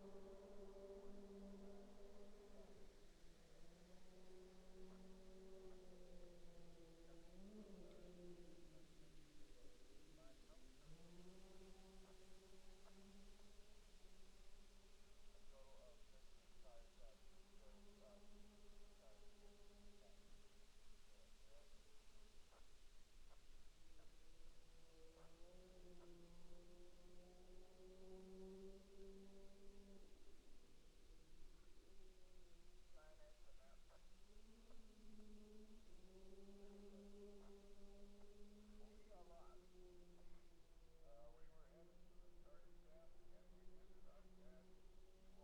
County Memorial Forest - Ojibwe Forest Rally Stage 11
The sounds of rally cars passing our marshal location for the Ojibwe Forest Rally